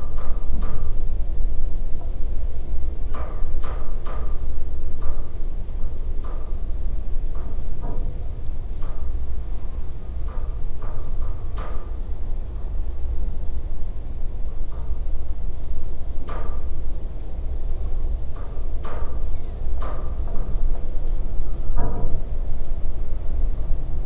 Šileikiai 28109, Lithuania, collapsed watertower
Collapsed metallic watertower still laying on the ground. The locals told me the watertower collapsed from the strong wind. Small omni mics and geophone were used in this recording.
Utenos apskritis, Lietuva, 6 November